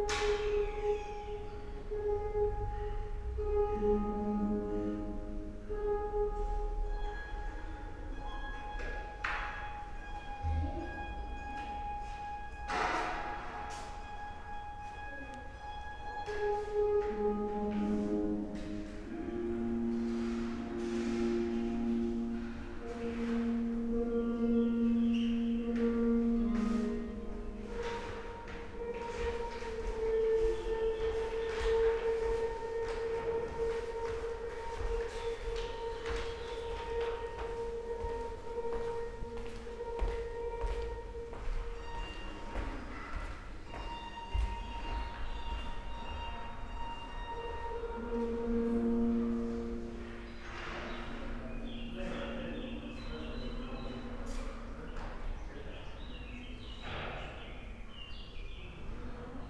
the Dominican Monastery, Ptuj - cloister, ambience
harp, steps, small talks, birds from outside ...
Ptuj, Slovenia